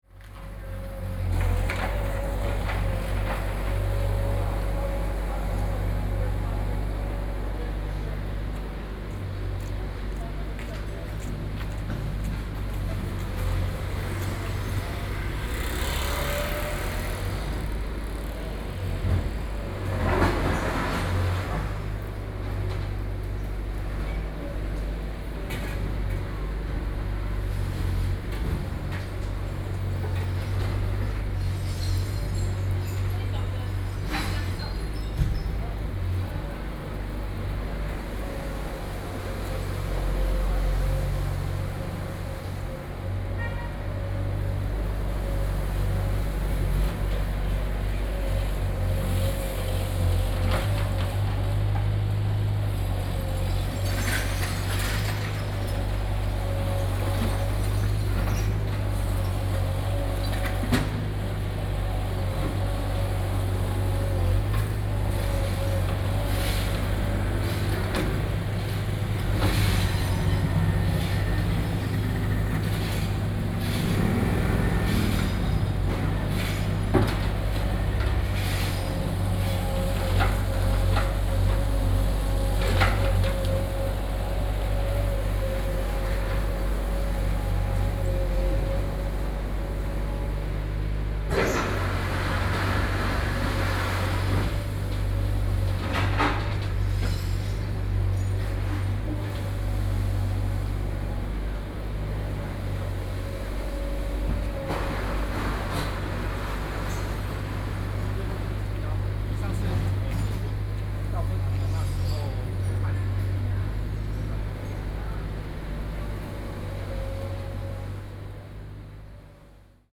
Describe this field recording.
Road Construction, Traffic Sound, Binaural recordings, Sony PCM D50